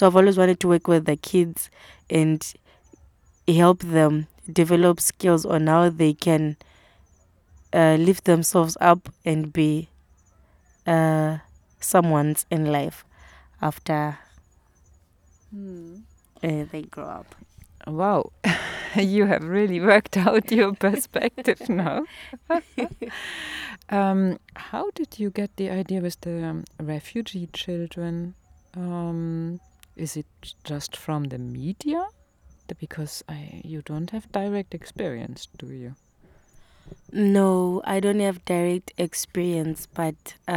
in the grounds of Tusimpe Mission, Binga - ...daily life of a young woman in Binga...
Bulemu works in a local Supermarket and is currently the breadwinner for her family... here she describes for us how we can imagine the life of young women in Binga to look like...
By now Bulemu, is working as a volunteer in a kita in Bielefeld... she is one of 16 young people from the global Souths to participate in a South – North exchange programme at Welthaus Bielefeld in Germany...
Binga, Zimbabwe, November 2018